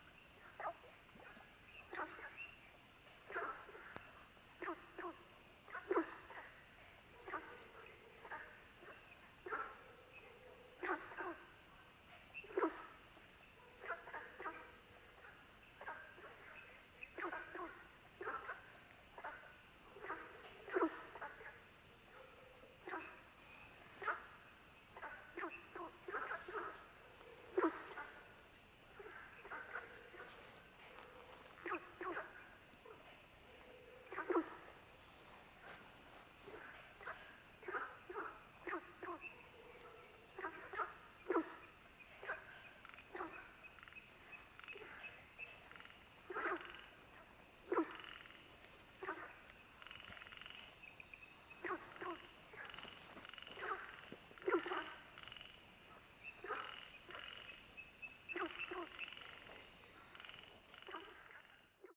三角崙, 魚池鄉五城村 - Frogs sound
Ecological pool, In the pool, Frogs chirping, Bird sounds, Firefly habitat area
Zoom H2n MS+XY
Puli Township, 華龍巷164號, 19 April 2016, 19:01